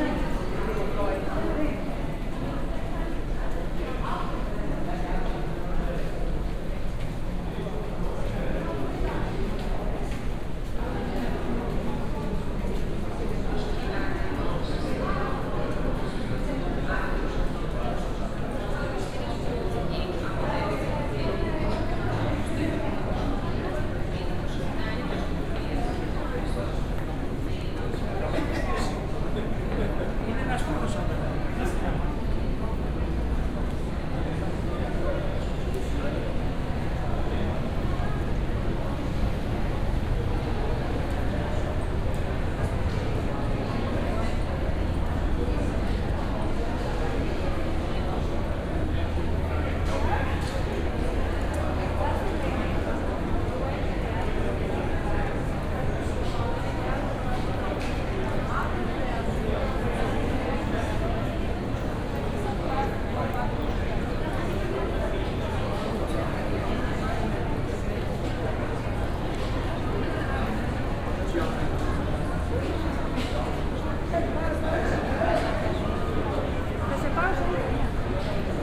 Athens, Syntagma Square - entrance to metro platforms on Syntagma Square
binaural. late afternoon. lots of people on the station going towards and from the platforms. (sony d50 + luhd PM01bins)
6 November 2015, ~18:00